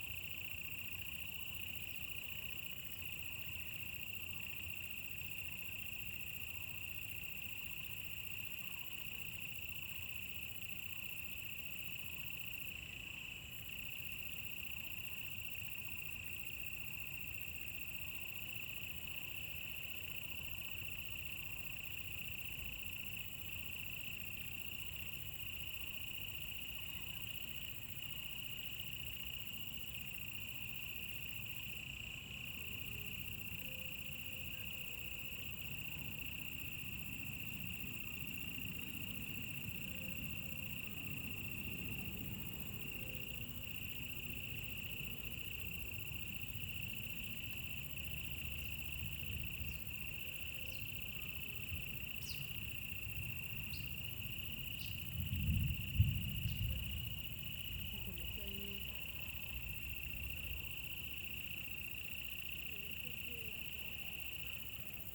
{"title": "埔里鎮南村里, Nantou County - Sound of insects", "date": "2016-05-04 15:16:00", "description": "Bird sounds, Sound of insects, In the woods\nZoom H2n MS+XY", "latitude": "23.96", "longitude": "120.92", "altitude": "624", "timezone": "Asia/Taipei"}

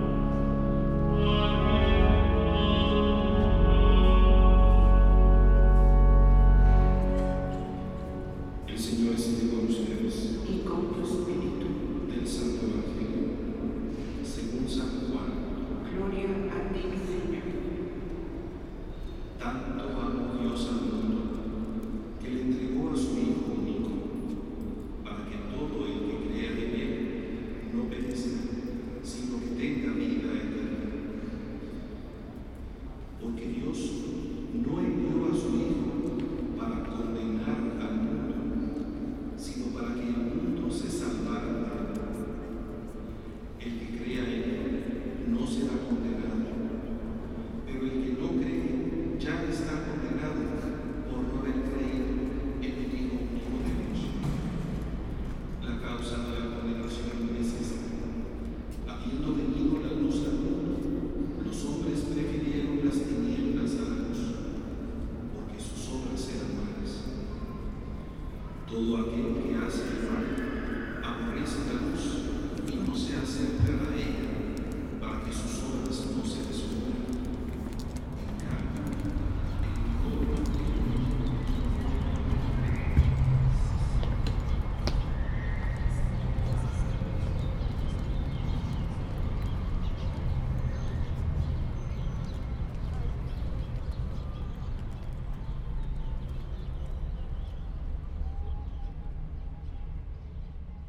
{"title": "Catedral Metropolitana, Ciudad de México, D.F., Mexico - Escorted Out of a Latin Mass", "date": "2016-04-06 13:10:00", "description": "Recorded with a pair of DPA4060's and a Marantz PMD661", "latitude": "19.43", "longitude": "-99.13", "altitude": "2241", "timezone": "America/Mexico_City"}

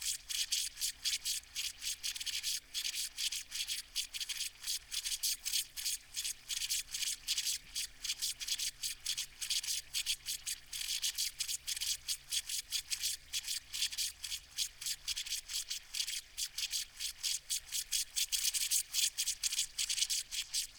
{"title": "Malton, UK - leaking pipe ...", "date": "2022-07-22 06:09:00", "description": "leaking pipe ... part of an irrigation system ... dpa 4060s in parabolic to mixpre3 ...", "latitude": "54.13", "longitude": "-0.56", "altitude": "101", "timezone": "Europe/London"}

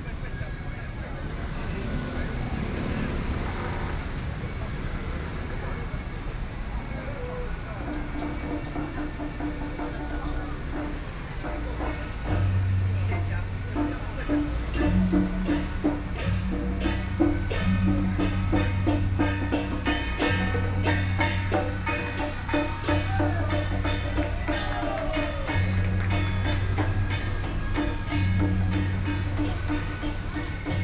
{"title": "Zhongzheng Rd., Luzhou District - Traditional temple Festival", "date": "2013-10-22 17:37:00", "description": "Traditional temple Festival, Traffic Noise, Binaural recordings, Sony PCM D50 + Soundman OKM II", "latitude": "25.08", "longitude": "121.47", "altitude": "19", "timezone": "Asia/Taipei"}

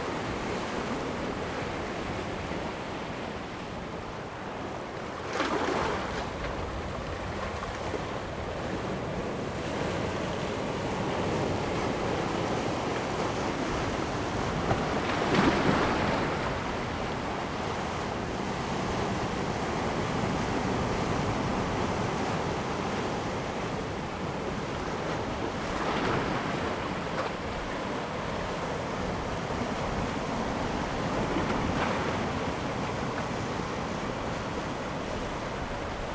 {"title": "Chenggong Township, Taiwan - the waves and Rock", "date": "2014-09-06 14:26:00", "description": "Sound of the waves, on the rocky shore, Very hot weather\nZoom H2n MS+ XY", "latitude": "23.05", "longitude": "121.35", "altitude": "5", "timezone": "Asia/Taipei"}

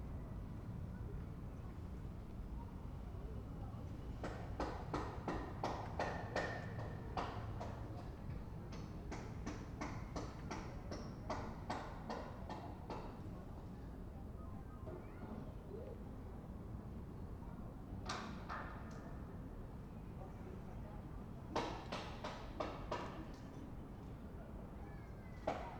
{"title": "berlin, wildmeisterdamm: gropiushaus, innenhof - the city, the country & me: inner yard of gropiushaus", "date": "2011-08-03 17:40:00", "description": "voices from the flats, busy workers, a man talking with his dog\nthe city, the country & me: august 3, 2011", "latitude": "52.43", "longitude": "13.47", "altitude": "48", "timezone": "Europe/Berlin"}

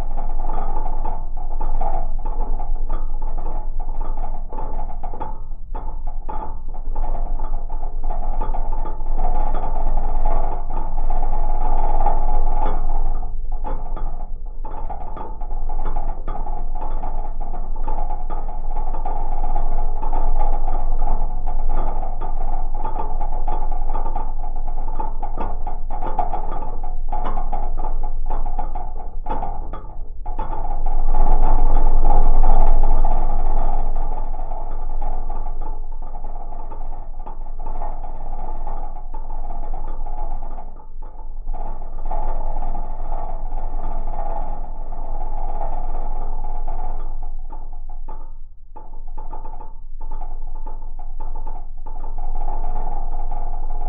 {"title": "Daugavpils, Latvia, lamp pole", "date": "2020-01-26 14:15:00", "description": "new LOM geophone on lamp pole on a new bridge", "latitude": "55.88", "longitude": "26.53", "altitude": "104", "timezone": "Europe/Riga"}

